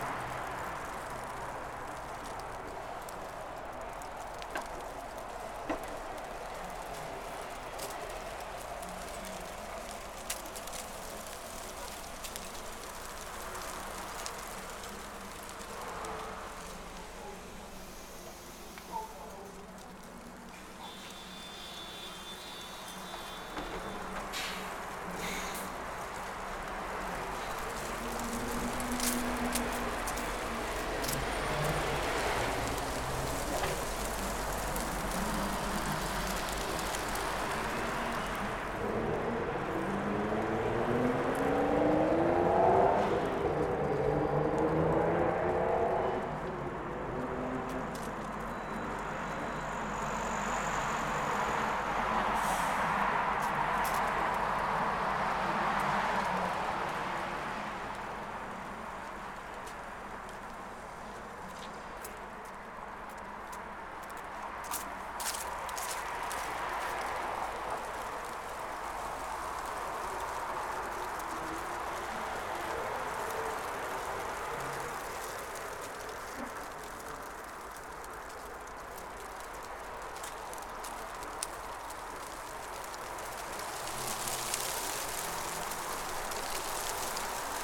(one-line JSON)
{"title": "Vytauto pr., Kaunas, Lithuania - Dry leaves rustling along pavement", "date": "2021-04-22 12:27:00", "description": "Traffic, pedestrian footsteps, wind moving dry leaves along the pavement. Recorded with ZOOM H5.", "latitude": "54.89", "longitude": "23.93", "altitude": "31", "timezone": "Europe/Vilnius"}